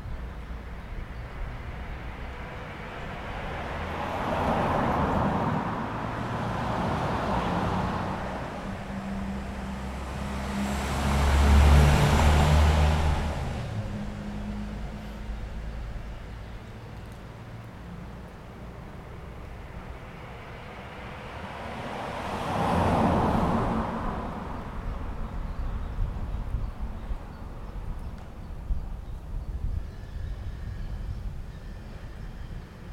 Міст, Вінниця, Вінницька область, Україна - Alley12,7sound11soundunderthebridge
Ukraine / Vinnytsia / project Alley 12,7 / sound #11 / sound under the bridge